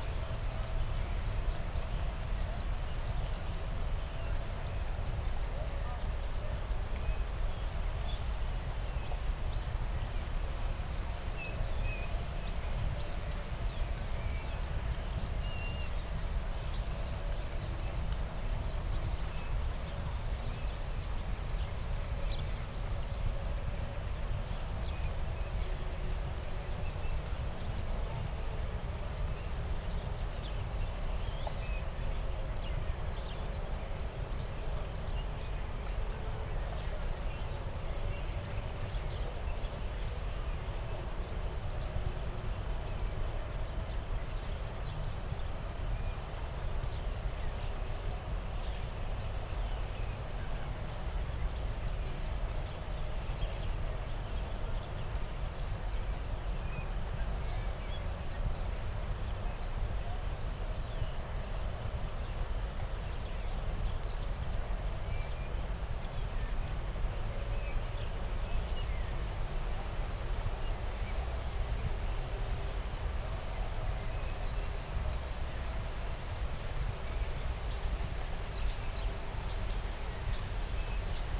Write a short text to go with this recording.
early summer evening, a group of starlings in the distance, (Sony PCM D50, DPA4060)